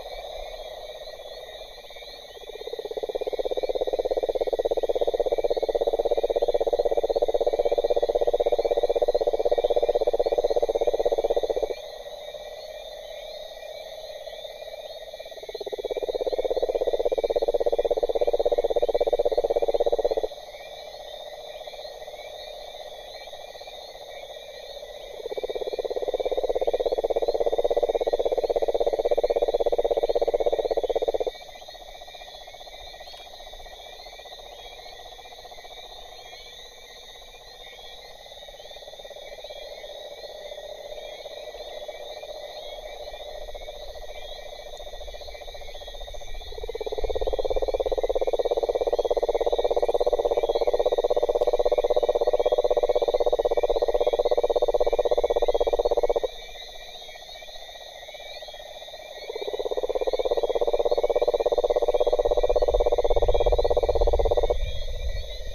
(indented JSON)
{
  "title": "Saint-claude",
  "date": "2010-08-01 21:23:00",
  "description": "Chants crapauds bœuf saison des amours",
  "latitude": "16.02",
  "longitude": "-61.68",
  "altitude": "556",
  "timezone": "America/Guadeloupe"
}